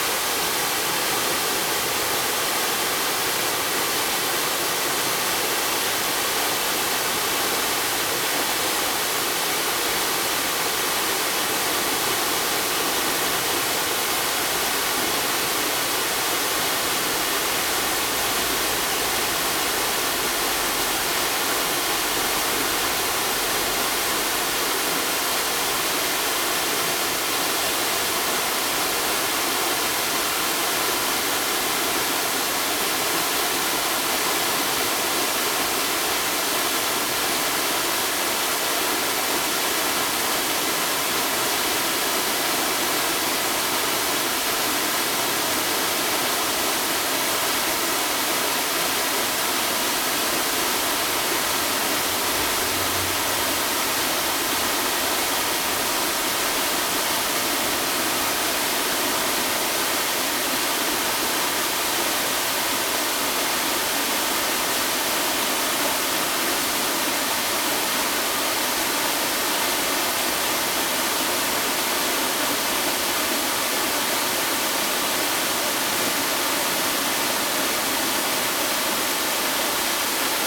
眉溪, 觀音瀑布, 蜈蚣里Puli Township - waterfalls
waterfalls
Zoom H2n MS+XY +Sptial Audio
Puli Township, Nantou County, Taiwan, 13 December